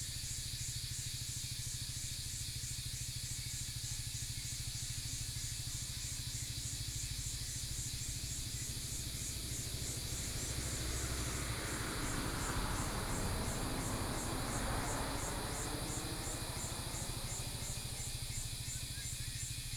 種瓜路45-1, 埔里鎮桃米里 - Cicadas cry
Cicadas cry
Binaural recordings
Sony PCM D100+ Soundman OKM II
Nantou County, Taiwan